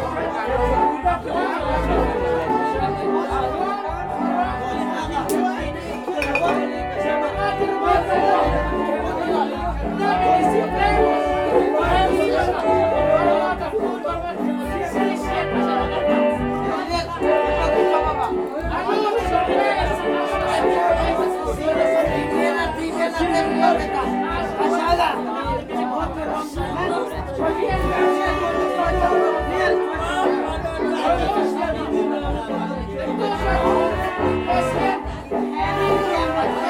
San Pedro La Laguna, Guatemala - Maximon